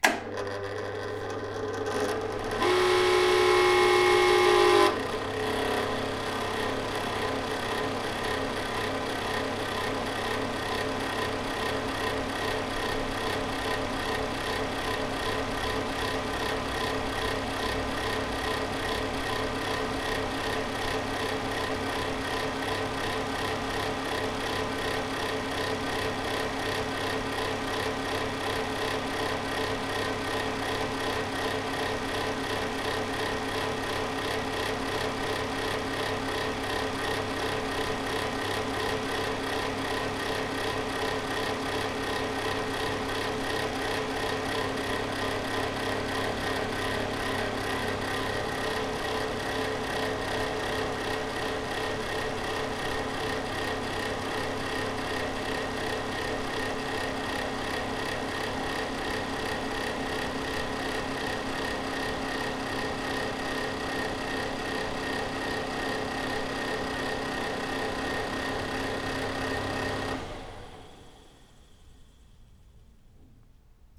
Poznan, Jezyce district, at the office, bathroom - electric heater
turning on the ventilator of an old portable electric heater